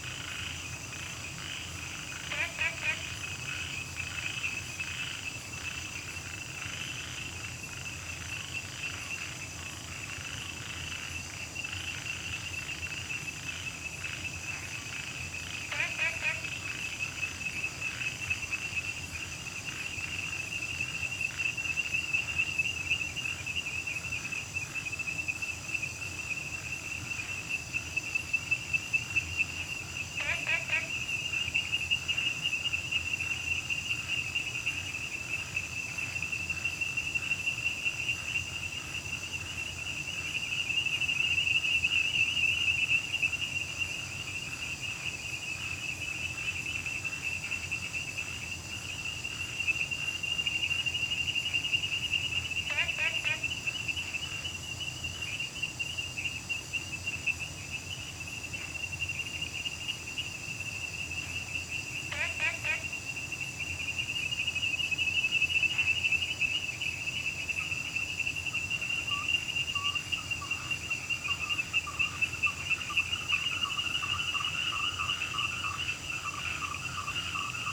Frogs chirping, Insects sounds, Wetland
Zoom H2n MS+ XY
Puli Township, 桃米巷11-3號, 10 August 2015